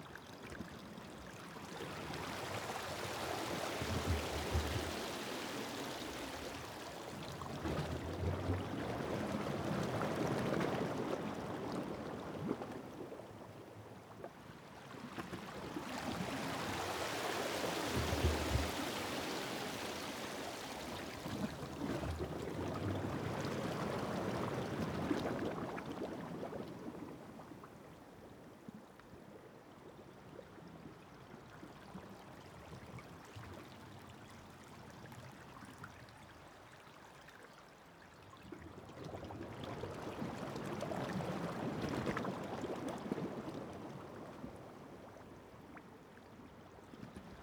Kalaone Sea Walk, Alofi, Niue - Kalaone Reef